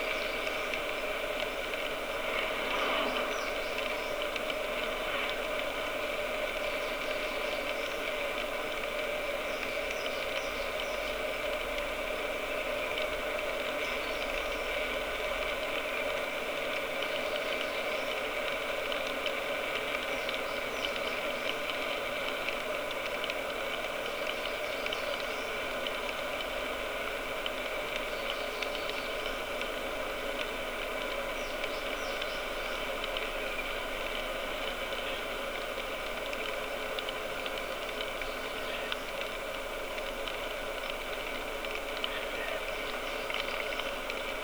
벌집 bee hive
벌집_bee hive...bees hiving in a log under a cliff-face on public land...of about 7 hives there is activity in only 1...there are also many other empty hives along this valley...contact mics hear a pulse inside the structure...condenser mics hear the avian activity in the valley as well as the noise from the nearby road and how it affects the hive...in a news article published this same week it is reported that 'Korean Beekeeping on the brink of collapse as 10 billion honeybees disappear'.